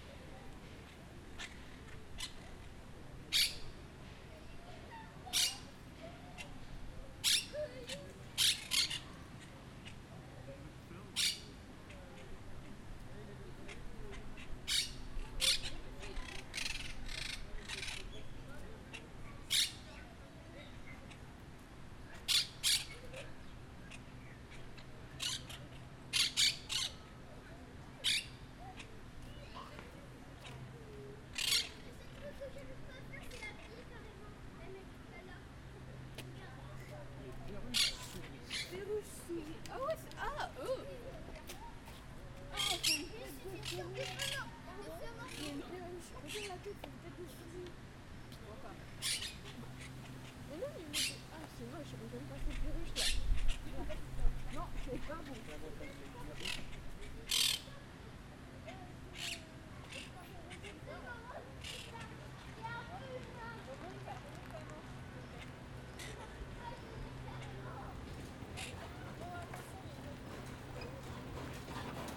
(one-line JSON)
{"title": "Jardin des Plantes, Paris, France - Perruche Souris", "date": "2014-08-18 12:15:00", "description": "Recording of Monk Parakeets singing at Jardin des Plantes.\nPerruche Souris (Myiopsitta monachus)", "latitude": "48.85", "longitude": "2.36", "altitude": "36", "timezone": "Europe/Paris"}